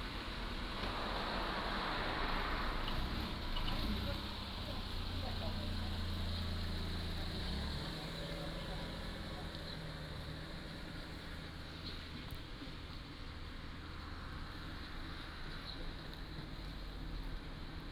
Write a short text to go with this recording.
In front of the convenience store, Birds singing, Traffic Sound